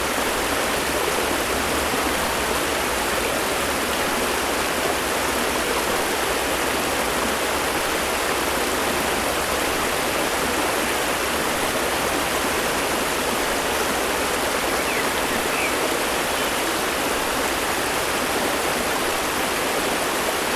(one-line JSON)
{"title": "Gießen, Deutschland - Wieseck after Rainfall", "date": "2014-06-13 12:51:00", "description": "There had been a rain storm the night before, and the riverlet \"Wieseck\" sounded now much more impressive... compared to its usual state :)\nRecorded with a ZoomH4N", "latitude": "50.59", "longitude": "8.69", "altitude": "158", "timezone": "Europe/Berlin"}